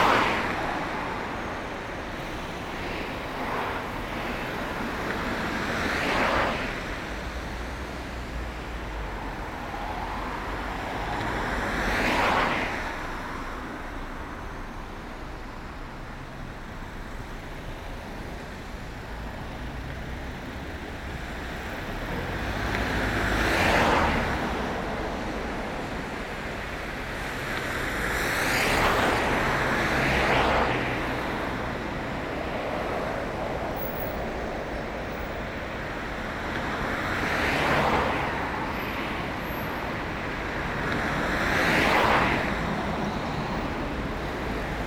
Waiting for friends, I took a few time to record this road. I'm thinking about people living here. How is this possible ?
Mons, Belgium, 2 December 2017